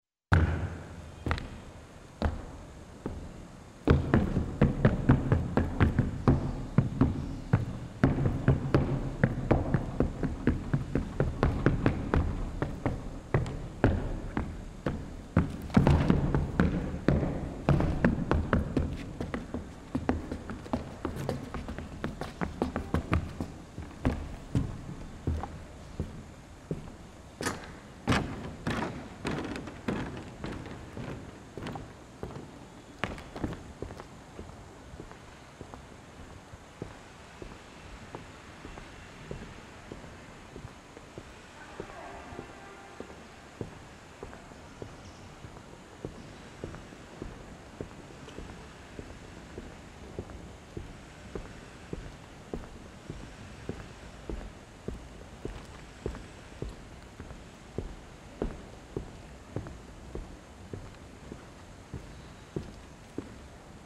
tip tap on the wooden platform
Santa Maria dello Spasimo, former church, 21/03/2009